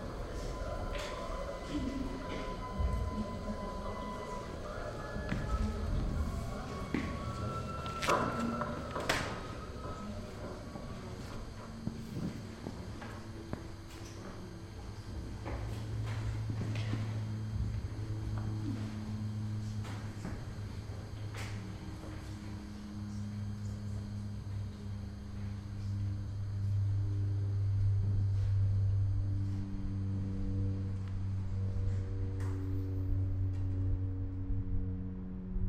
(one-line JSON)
{"description": "Meetfactory, and art residency place in Prague, recorded during the performance of Handa Gote troupe.", "latitude": "50.06", "longitude": "14.41", "altitude": "197", "timezone": "Europe/Berlin"}